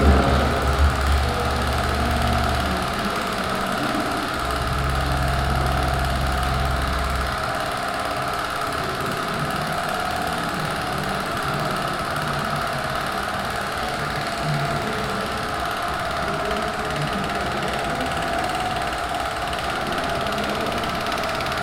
inside the museum - exhibition nam june paik award 2010.
installation of 3 16 mm movie projectors by artist Rosa Barba
soundmap d - social ambiences, art spaces and topographic field recordings
Düsseldorf, Germany